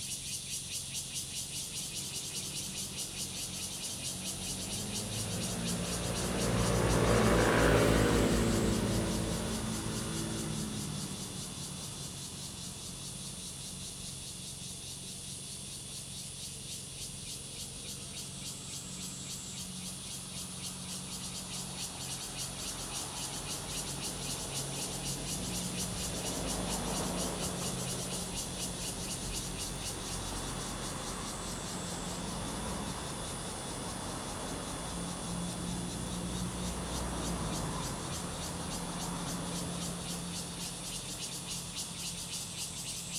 Sec., Ji'an Rd., Ji'an Township - Under the tree
Traffic Sound, Cicadas sound, Hot weather
Zoom H2n MS+XY